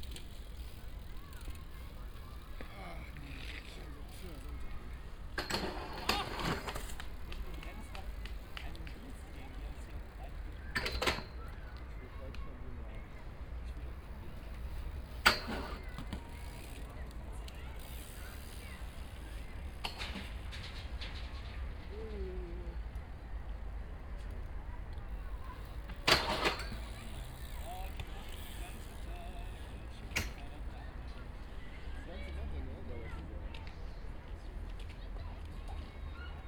köln, mediapark, bridge - bmx bikers practising
BMX bikers jumping up and down on a metal railing (binaural, use headphones!)
Köln, Deutschland, October 2010